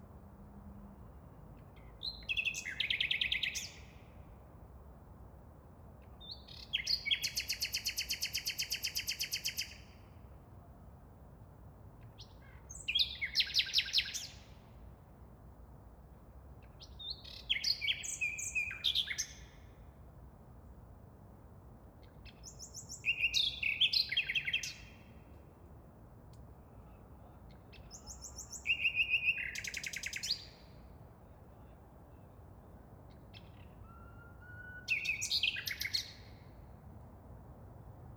Moabit, Berlin, Germany - Midnight nightingale, crystal clear
Berlin's nightingales are a joy to hear when coming home at night. Their songs from the dark interiors of parks, cemeteries, railway edges and playground bushes, are crystal clear even from a distance and they don't seem to mind if you approach more closely to listen. During late April and early May they are in full voice, particularly on warm nights.